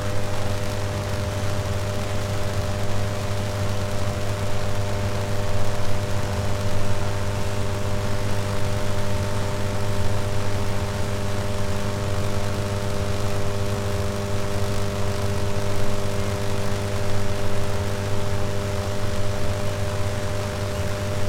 Umeå, Sörfors-kraftstation. Electricity in the rain.
Rain on the power station units